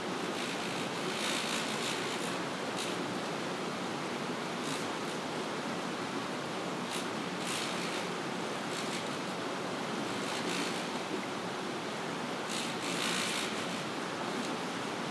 Hastedter Osterdeich, Bremen, Germany - Hydroelectric power plant
Using binaural microphones, capturing a buzzing sound and the sound of flowing water.
Deutschland, 13 May, 14:00